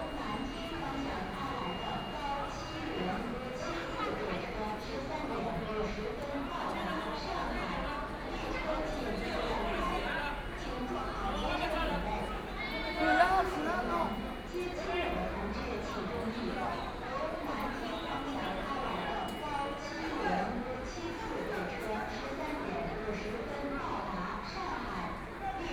At the exit of the train station, Many people waiting to greet friends and family arrive at the station at the exit, the sound of message broadcasting station, Zoom H6+ Soundman OKM II
Zhabei, Shanghai, China, 23 November, 13:37